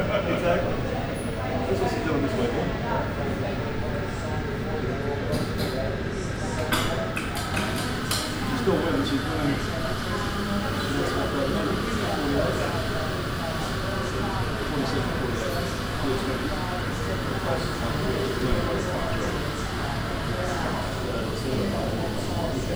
neoscenes: Gate 63 coffee shop
Mascot New South Wales, Australia, 2 December 2010, ~07:00